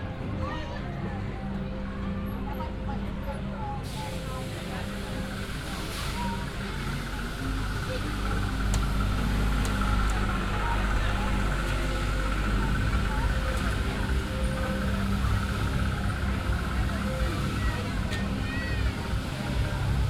Vereinigtes Königreich - Brighton, pier, dolphin race
At Brighton Pier, a full artificial amusement area - the sound of a man announcing and commenting a game called dolphin race.In the background the sounds of other venues on the fairground and an electric trolley passing by.
international city scapes - topographic field recordings and social ambiences
Brighton, UK, 4 October 2015